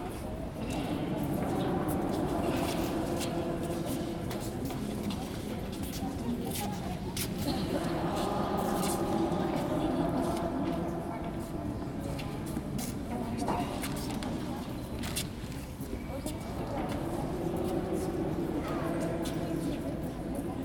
Dom, Altstadt-Nord, Köln, Deutschland - Im Kölner Dom | In the Cologne Cathedral
Der Klang einer Messe in einer Seitenkalpelle, Schritte und Flüstern der Besucher | Sound of celebrating a mass, steps and whispers of visitors